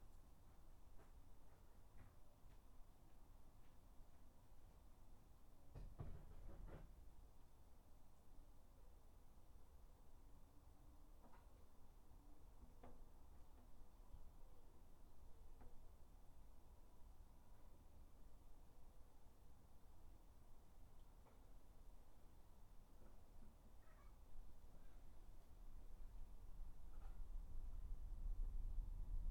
Dorridge, West Midlands, UK - Garden 7
3 minute recording of my back garden recorded on a Yamaha Pocketrak